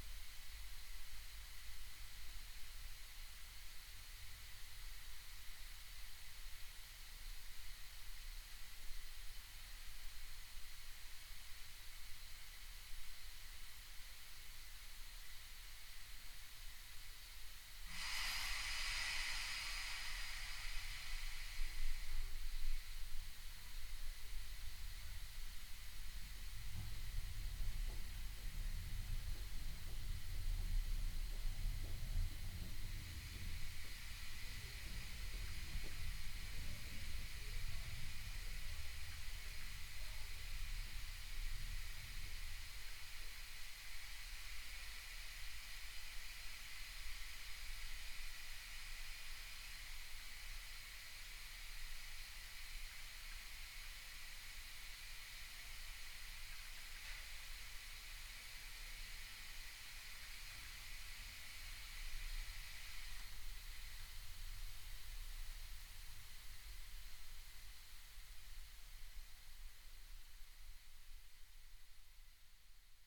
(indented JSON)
{
  "title": "Ave., Seattle, WA, USA - Living History (Underground Tour 6)",
  "date": "2014-11-12 11:55:00",
  "description": "Hissing from old pipeworks and valves, still operational. \"Bill Speidel's Underground Tour\" with tour guide Patti A. Stereo mic (Audio-Technica, AT-822), recorded via Sony MD (MZ-NF810).",
  "latitude": "47.60",
  "longitude": "-122.33",
  "altitude": "30",
  "timezone": "America/Los_Angeles"
}